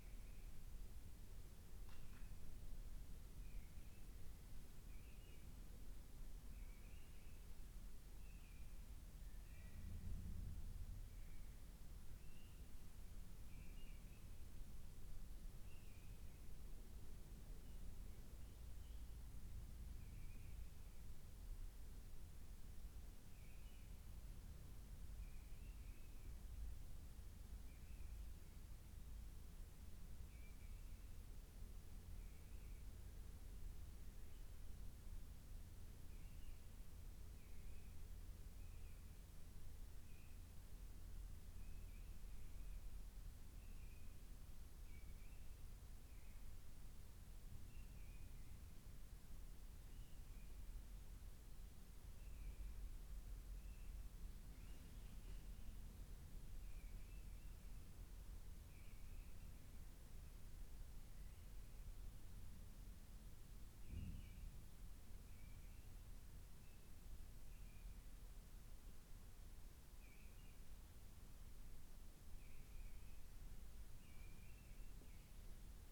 Luttons, UK - inside church ... outside mistle thrush ...
Recording made inside a church of a mistle thrush singing outside ... lavalier mics in a parabolic ... background noise ...